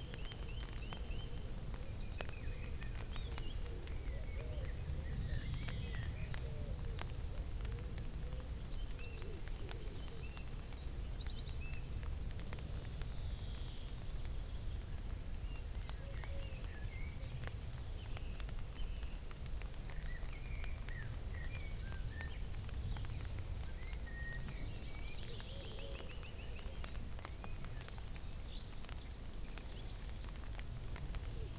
Bridge Villa Camping, Crowmarsh Gifford, Wallingford, Oxfordshire, UK - Dozing and waking in the tent near the A4074
Made this recording in 2010 when I was doing a lot of exploratory walks around the A4074 road, trying to get closer to the landscape which I am often separated from by my car when I am driving on the road there. I did the walk in two parts, starting in Reading, and taking the footpaths around the A road as it is far too dangerous to walk directly on the road the whole way. I camped overnight in Wallingford on the night of the first day of walking, then met Mark early on, and walked the rest of the way into Oxford. I set up my recorder in the tent before I went to sleep, ready to record the early morning birds. Woke up, put it on, then fell back asleep. In this recording I am dozing with the birds and there is an aeroplane, a little light rain, and some tiny snoring. It was close to 8am if I remember rightly. Just recorded with EDIROL R-09 and its onboard microphones. I kept in the part at the end where I wake up and turn off the recorder!